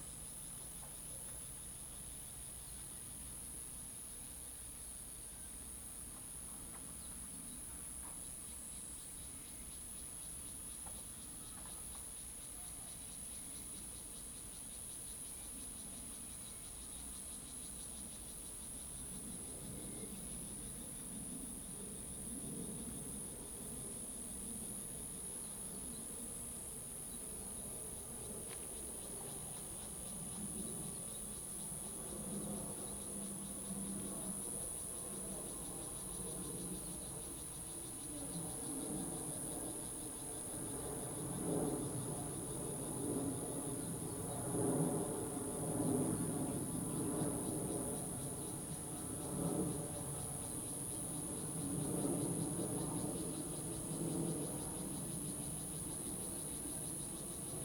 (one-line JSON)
{"title": "羅浮壽山宮, Fuxing Dist., Taoyuan City - Small temple", "date": "2017-08-10 15:48:00", "description": "Small temple, Bird call, Cicada sound, The plane flew through\nZoom H2n MS+XY", "latitude": "24.80", "longitude": "121.37", "altitude": "311", "timezone": "Asia/Taipei"}